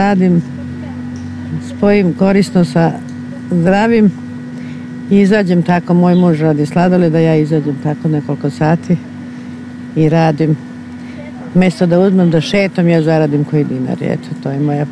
Kalemegdan, (Ice cream lady) Belgrade - Prodavacica sladoleda (Ice cream lady)
15 June 2011